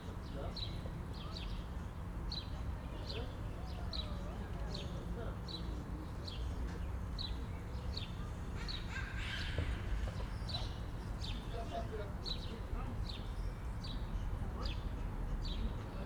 yard ambience, kids playing, sounds from inside building
(Sony PCM D50, DPA4060)